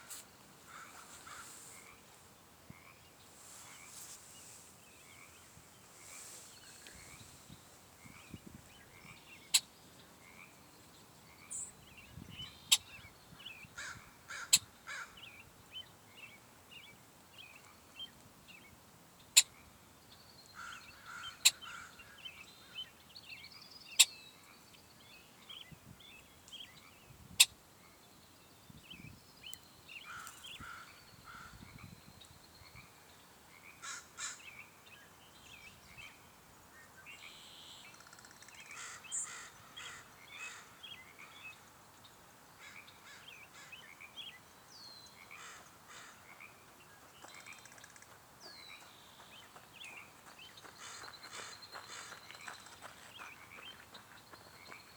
Jericho Park, Vancouver, BC, Canada - Jericho Park : the birds, the frogs and the jogger.